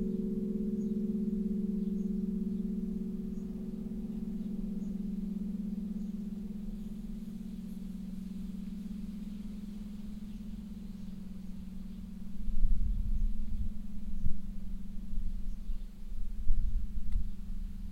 pintsch, church, bells
At the St. Maximilin Church of Pintsch.
The 3oclock bells on a hot, mellow windy summer day.
Pintsch, Kirche, Glocken
Bei der St. Maximilian-Kirche in Pintsch. Die 3-Uhr-Glocken an einem heißen, milden windigen Sommertag.
Pintsch, église, cloches
À l’église Saint-Maximin de Pintsch. Le carillon de 15h00 un doux soir d’été chaud mais venteux.